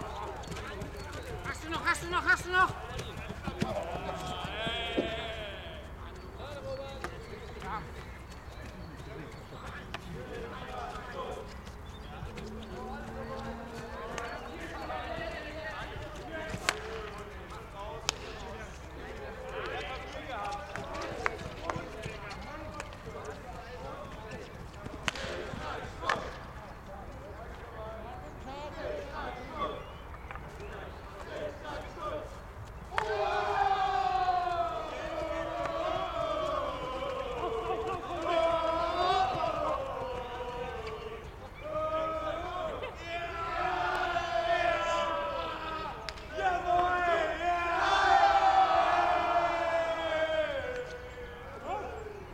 Ravensberg, Kiel, Deutschland - Field hockey training
Field hockey training (parents team) for fun in the evening
Zoom F4 recorder, Zoom XYH-6 X/Y capsule, windscreen
Kiel, Germany